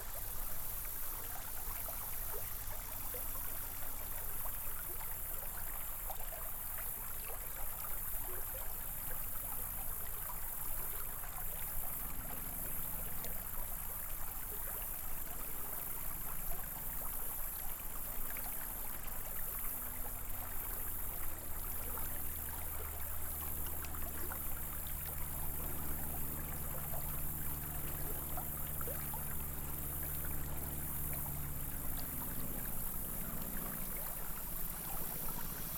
Big Creek, Indian Camp Creek Park, Moscow Mills, Missouri, USA - Big Creek September
Big Creek in September